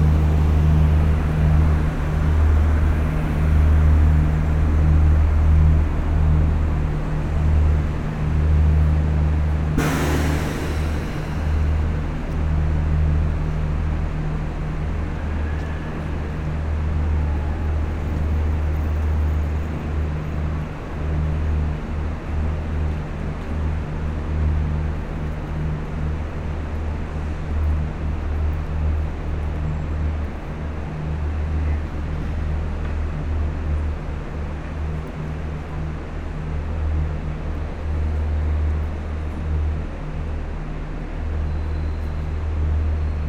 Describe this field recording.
The very noisy Tours station atmosphere. The diesel engines flood the huge station with a heavy drone sound. After a walk in the station, I buy a ticket in the office, and I go out near the fountain.